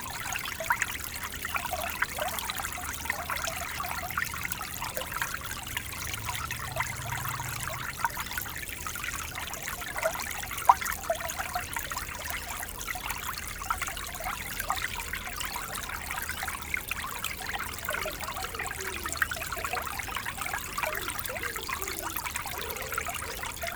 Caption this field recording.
It's a funny name for a river, but there's nothing about a train there ! The river is called Train. It's a small stream inside a quiet district.